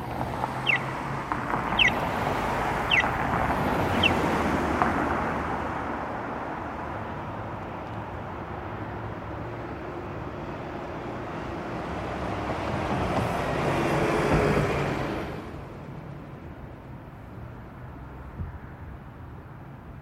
University, Windsor, ON, 加拿大 - intersection
recorded beside harverys burger joint sound includes car running and people riding bicycles